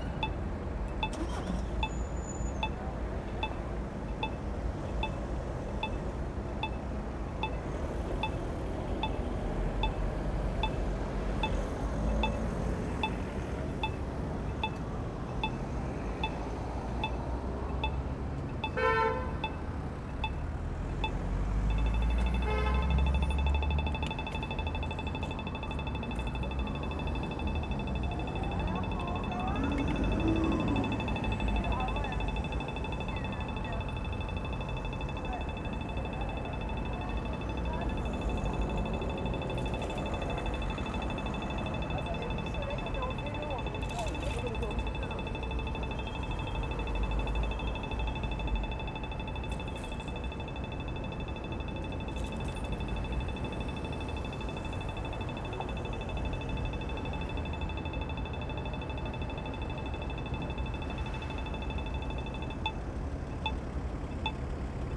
Sint-Jans-Molenbeek, Belgium - Brussels traffic light bleeps
One of the most noticeable sound of Brussels are the traffic light bleeps, which play fast when you can cross and slow when you cannot. There loudness responds to noise. A loud sound increases the volume, which reduces again when it is quieter.
Molenbeek-Saint-Jean, Belgium, 15 February 2016, ~10:00